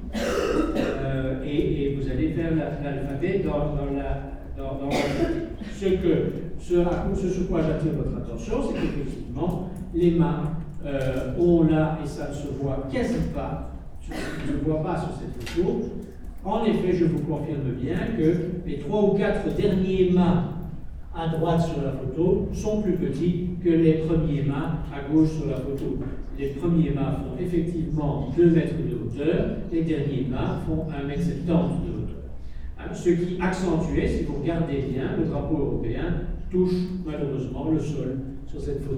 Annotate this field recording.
In the small Pierre de Coubertin auditoire, a course of politics.